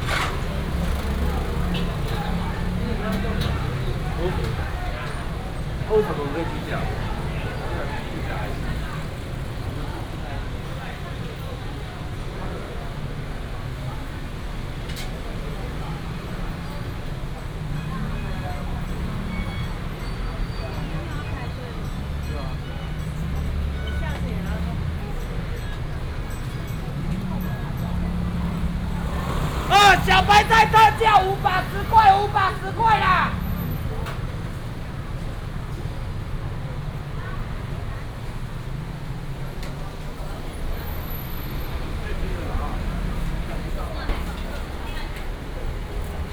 Dalong St., Datong Dist., Taipei City - walking in the Street
night market, Traffic sound
9 April, ~18:00